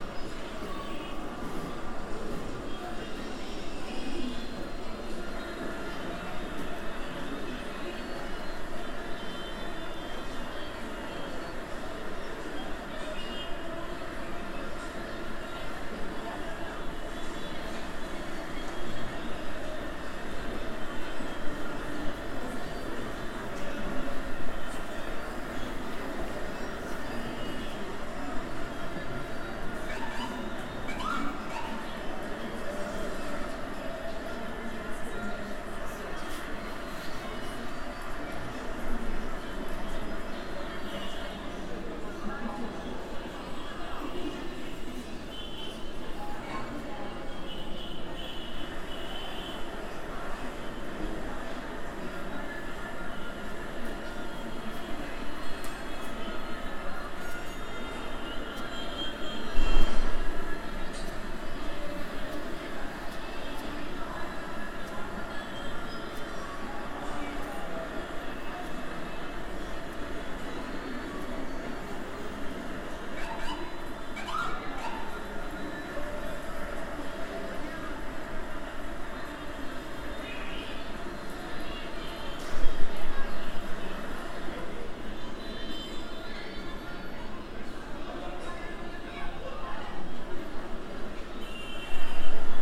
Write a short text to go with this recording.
museum folkwang, essen: »eine einstellung zur arbeit«, videoinstallation von antje ehmann/harun farocki